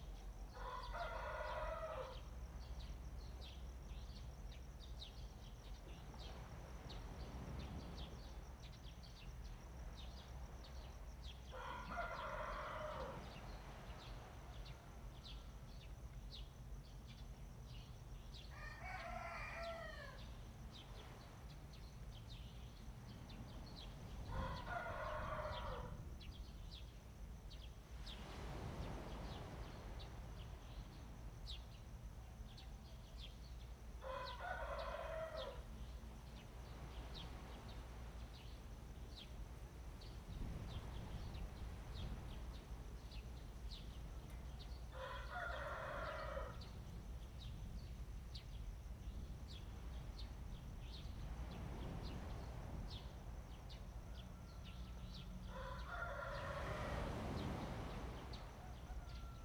楓港海提, Fangshan Township - In the morning
In the morning next to the fishing port, Chicken crowing, Bird cry, Sound of the waves, Traffic sound
Zoom H2n MS+XY
Fangshan Township, Pingtung County, Taiwan, March 2018